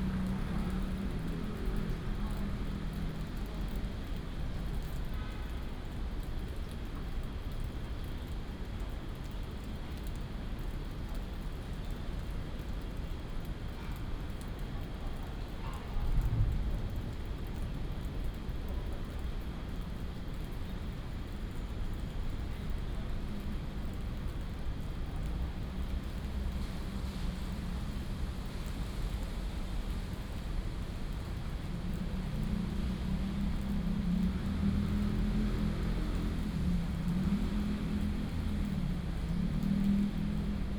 安祥公園, Da’an Dist., Taipei City - in the Park
in the Park, Bird calls, Raindrop sound, Thunder, Thunderstorm coming to an end